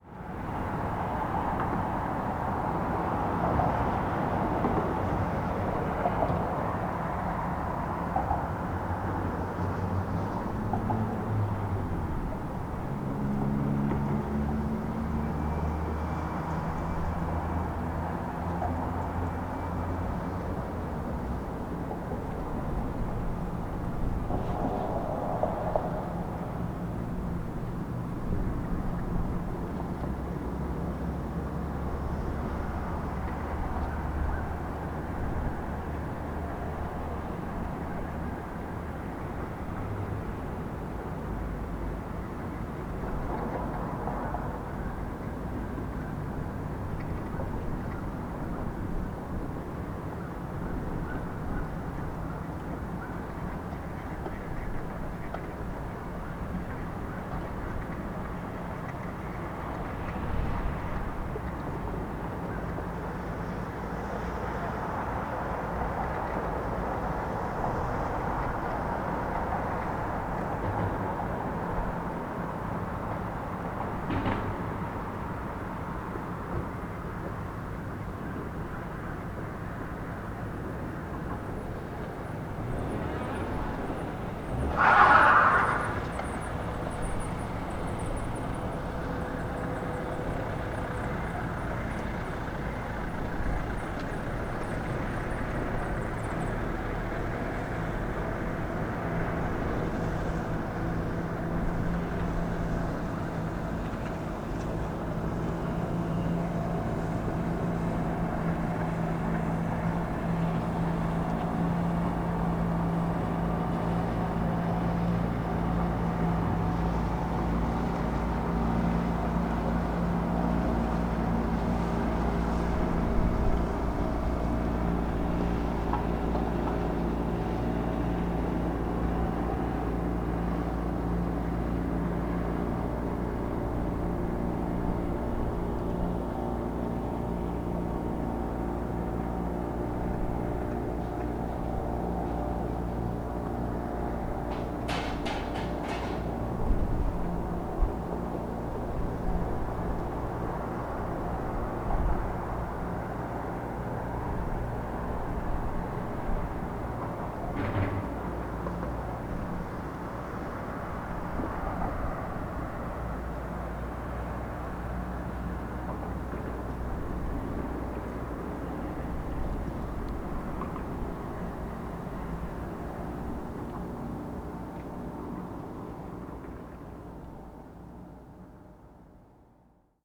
some busy ducks, cars crossing the bridge, a boat passing by on the oder river
the city, the country & me: january 3, 2014